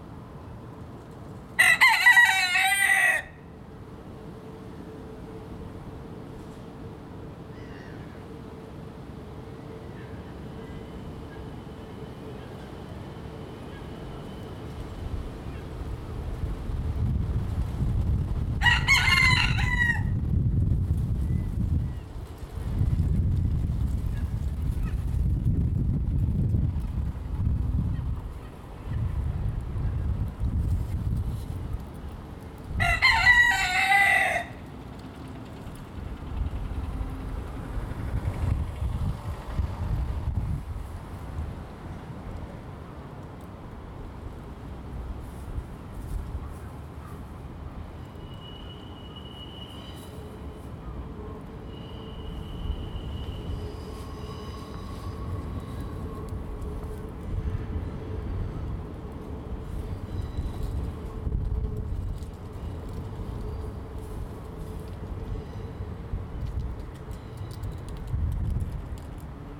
Binckhorst, L' Aia, Paesi Bassi - Binckhorst's cock guarding
The cock was being pretty loud, the wind as well. You can also hear a plane flying, a scooter, a car and a train going by. I used my Zoom H2n.